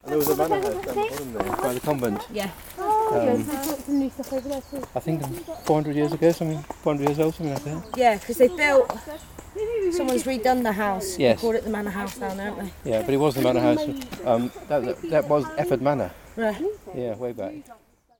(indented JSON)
{
  "title": "Walk Three: Efford Manor House",
  "date": "2010-10-04 16:34:00",
  "latitude": "50.39",
  "longitude": "-4.11",
  "altitude": "72",
  "timezone": "Europe/London"
}